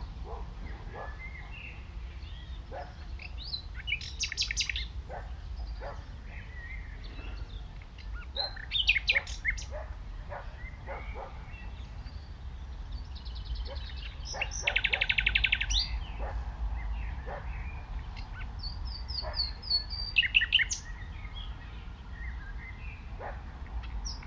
The nightingale's perspective, The Wet Triangle, Brehmestraße, Berlin, Germany - The nightingale's perspective
Recorded from an audio stream left overnight at this location. The mics were hidden in a thick bramble bush. The recording starts as a nice morning atmosphere with distance bell. A lesser whitethroat and blackbird are singing. The nightingale is sings very close by (would not happen if the recordist was personally present). It seems as if we are listening from his perspective. Around 7min30 dogs and (human) dog walkers pass by chatting. Again I get the rather odd impression that I'm hearing what the nightingale is hearing. He does pause slightly, maybe just checking, before singing again.
Deutschland